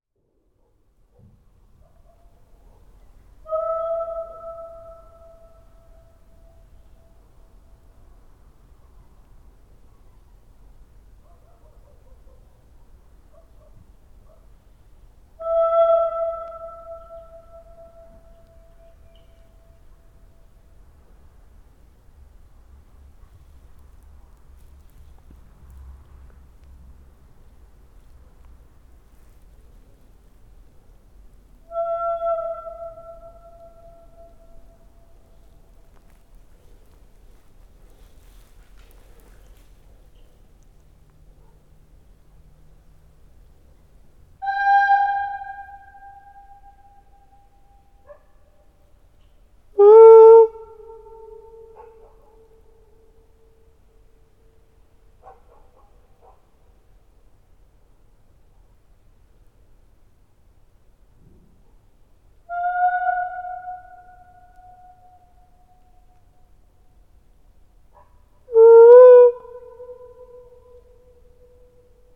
Lipce Reymontowskie, Polska - Tunnel under railway track lines ( binaural records)
Sounds from tunnel under railway track lines. The first part consists of the singing of two persons standing at two ends of the tunnel, the second part is a walk from beginning to end of tunnel
Lipce Reymontowskie, Poland, 1 January 2016